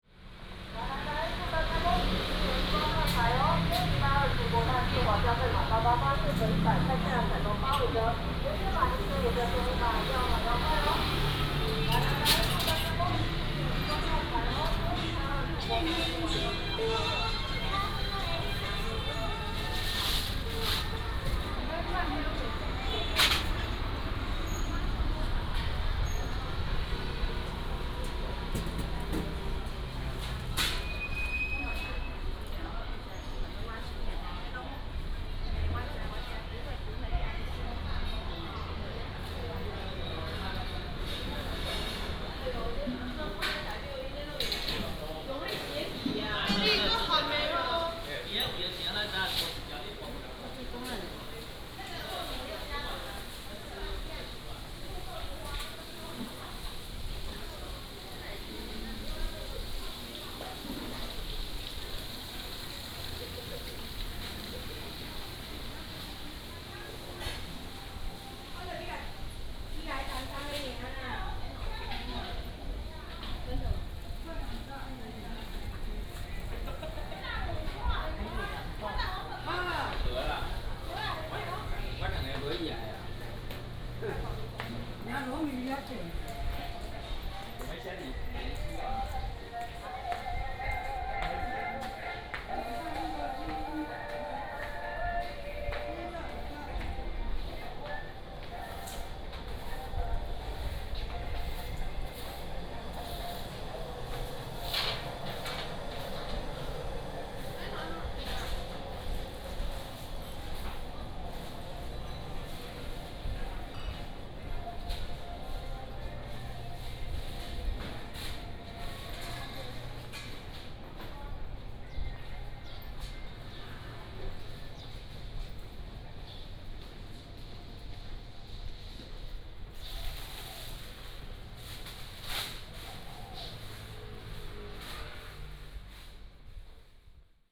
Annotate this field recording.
Traditional markets, Preparing for rest